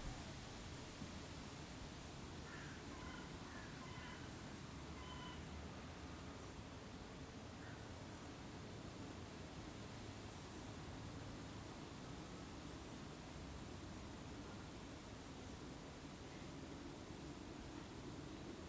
Rue du Craetveld, Bruxelles, Belgique - Les oiseaux
Sur ma terrasse.